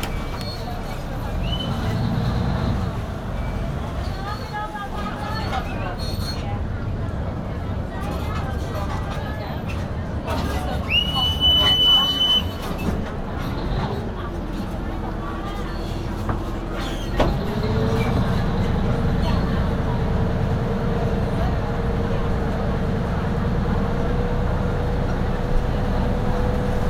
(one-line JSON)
{"date": "2009-04-15 11:12:00", "description": "Bangkok, Chao Phraya River, on a boat.", "latitude": "13.75", "longitude": "100.49", "timezone": "Asia/Bangkok"}